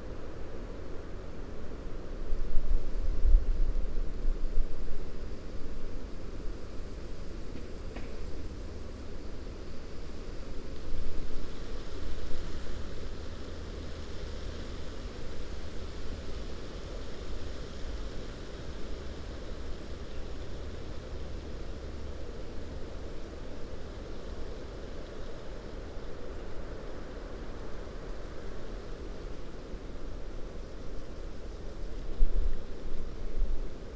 wind above the quarry - through tree crowns, spoken words - ”pokopališče zapuščenih kamnov”